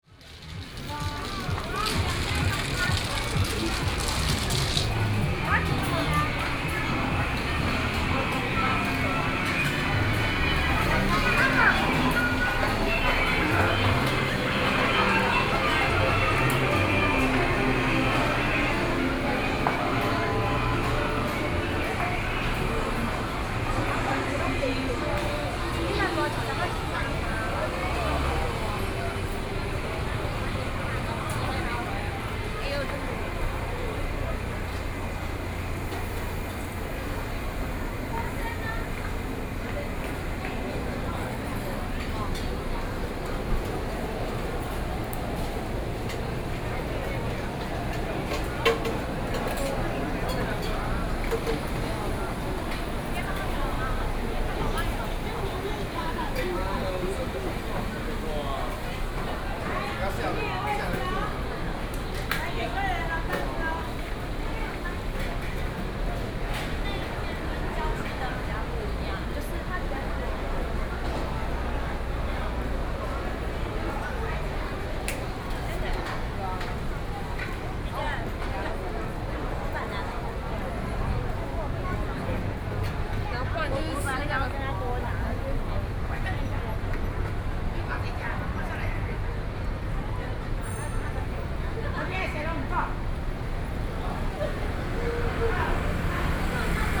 Walking through the Night Market, Traffic Sound, Tourist, Various shops voices
Sony PCM D50+ Soundman OKM II